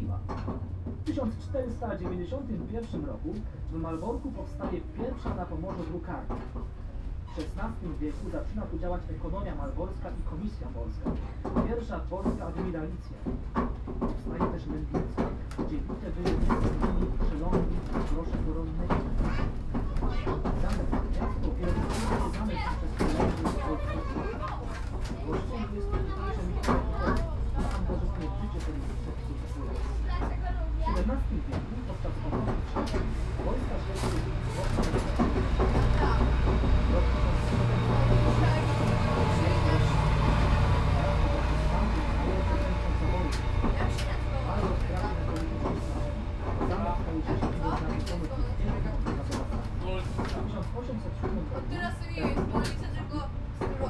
Malbork, Poland, tourists train
short tour on tourist train
13 August 2014